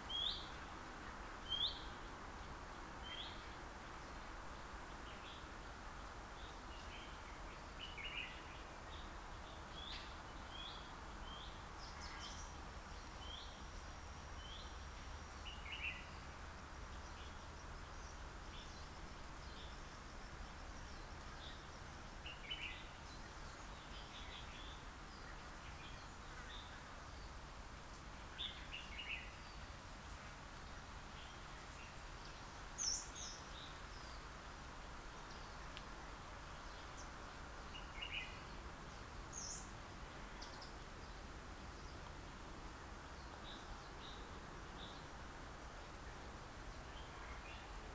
Hong Kong Trail Sec., Hong Kong - H017 Distance Post
The seventeenth distance post in HK Trail, located at the east-west side of Pokfulam Village, with a pavilion nearby. You can listen to different kind of bird songs around.
港島徑第十七個標距柱，位於薄扶林村東北面，附近有一涼亭。你可以聽到不同種類的鳥鳴。
#Bird, #Cricket, #Bee, #Plane